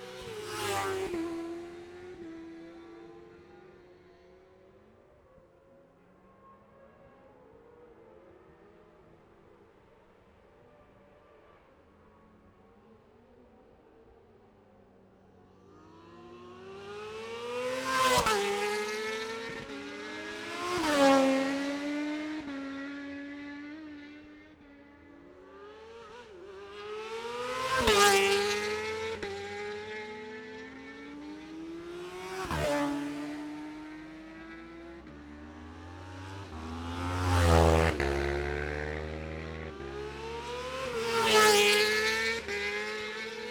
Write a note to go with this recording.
Gold Cup 2020 ... 600 odds then 600 evens practice ... Memorial Out ... dpa 4060s to Zoom H5 ...